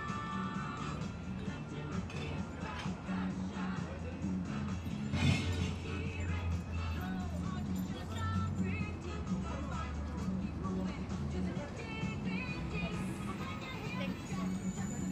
Northwest Berkeley, Berkeley, CA, USA - glass recycling center, Berkeley, 11/07/2012
beer bottle recycling worth $11.43